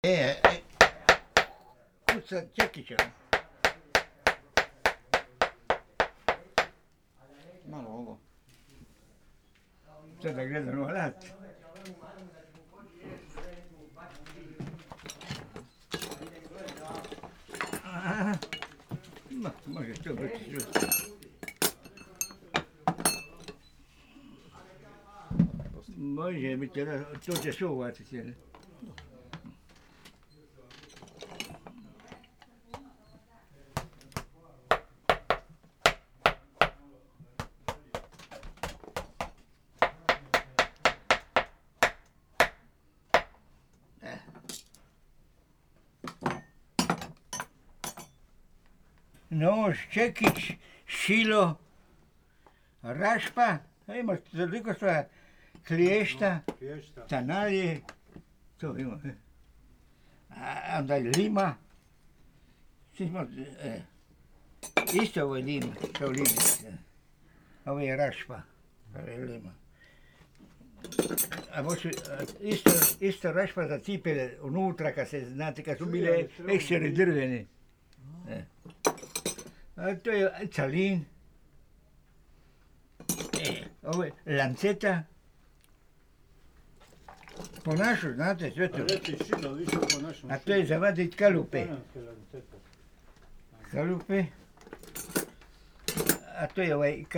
Stari Grad, old crafts - the oldest shoemaker in town
Mice Cesareo in his workshop, demonstrating his tools
Croatia, 28 August